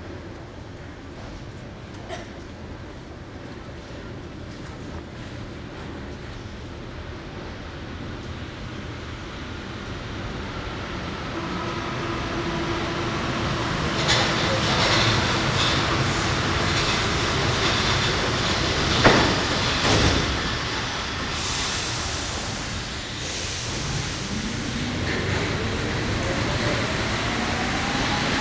Metro trip from Republique to Rambuteau, Paris
Metro trip from Republique to Rambuteau. Some wind. Binaural recording.
Paris, France